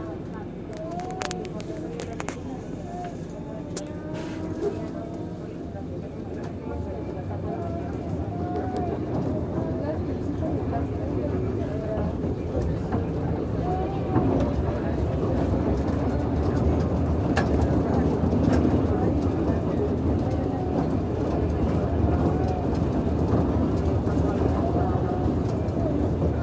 Av. Ayacucho, Medellín, Antioquia, Colombia - Viaje en travía entre san josé y buenos aires
Sonido ambiente de una viaje en travía entre san josé y buenos aires.
Coordenadas: 6°14'50.6"N+75°33'55.7"W
Sonido tónico: voces hablando, sonido de tranvía (motor).
Señales sonoras: niño cantando, celular sonando, puertas abriendo y cerrando, señal de abrir y cerrar puertas.
Grabado a la altura de 1.60 metros
Tiempo de audio: 7 minutos con 43 segundos.
Grabado por Stiven López, Isabel Mendoza, Juan José González y Manuela Gallego con micrófono de celular estéreo.
8 November 2021, 14:13